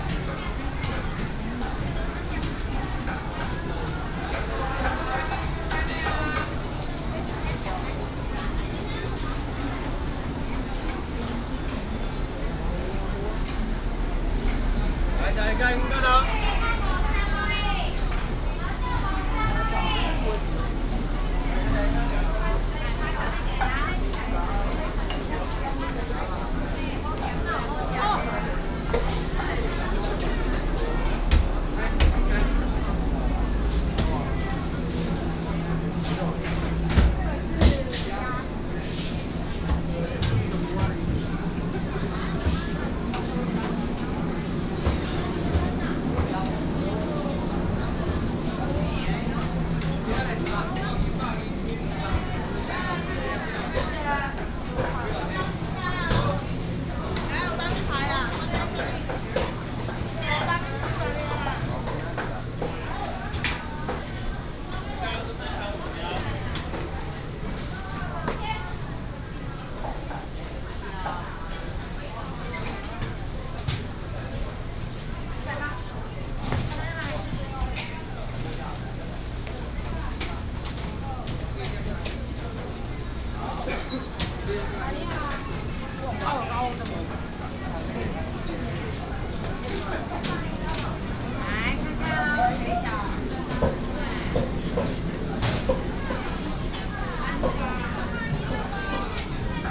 仁化黃昏市場, Dali Dist., Taichung City - in the dusk market
walking in the dusk market, Traffic sound, vendors peddling, Binaural recordings, Sony PCM D100+ Soundman OKM II